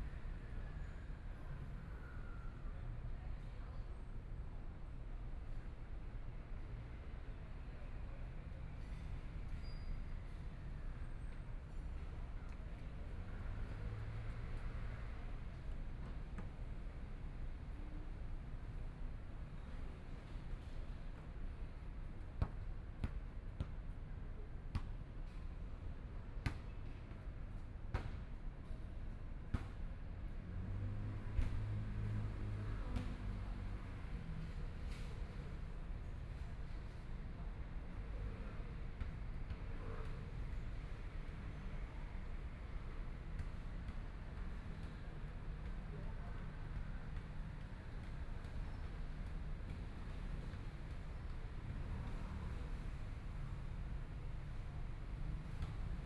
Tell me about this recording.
Sitting in the park, In children's play area, Environmental sounds, Motorcycle sound, Traffic Sound, Binaural recordings, Zoom H4n+ Soundman OKM II